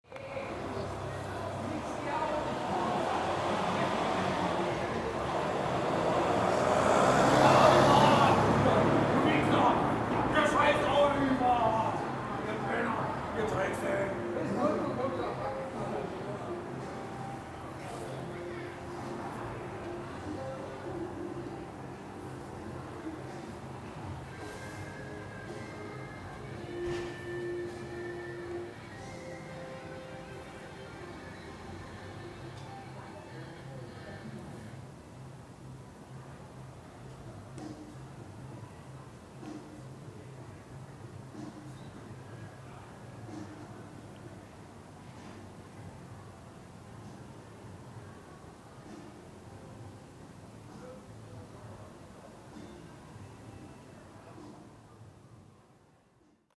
saarbrücken's soho. recorded after midnight, oct 18th, 2008.